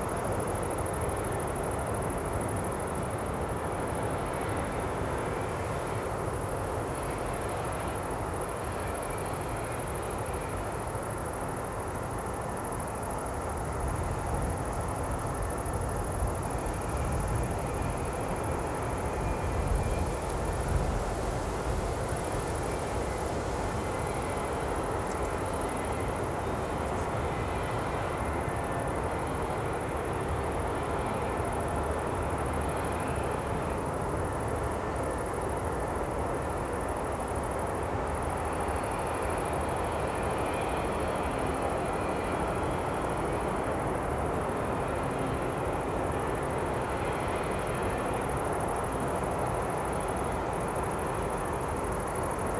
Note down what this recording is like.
Distant sounds heard from the edge of this vast hole in the ground. It's desolate sight on a warm summer day.